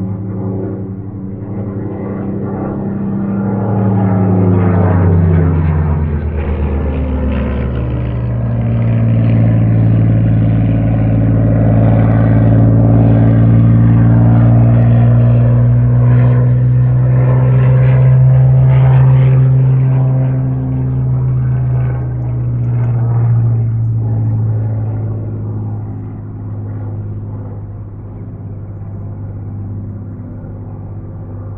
Spitfire, Malvern Wells, UK
A rare opportunity to record a WW2 Spitfire above my house performing an aerobatic display. Maybe you can detect the slow victory roll at the end.
MixPre 6 II with 2 Sennheiser MKH 8020s on the roof to capture the best sounds reverberating off The Malvern Hills and across The Severn Valley.